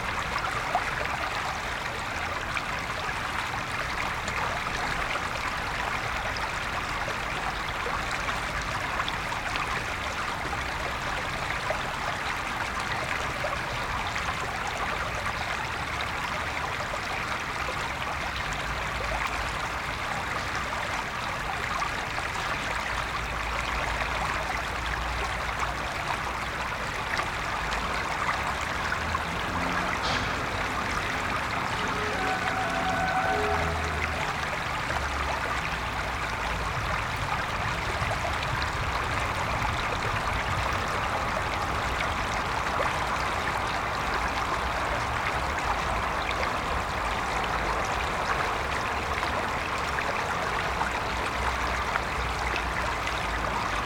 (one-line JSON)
{"title": "Chemin du pont qui bruit, Montluel, France - The Sereine river", "date": "2022-07-22 17:02:00", "description": "Water flow, distant train.\nTech Note : Sony PCM-M10 internal microphones.", "latitude": "45.85", "longitude": "5.06", "altitude": "199", "timezone": "Europe/Paris"}